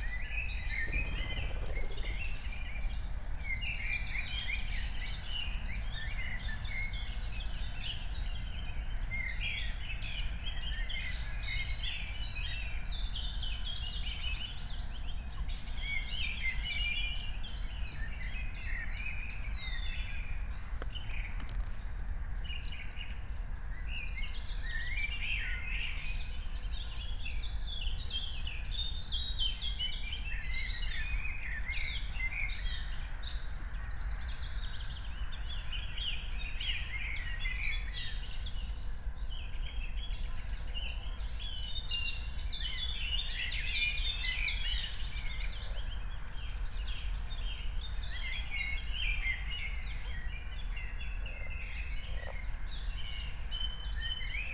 Königsheide, Berlin - forest ambience at the pond
8:00 bells, frog, crows and others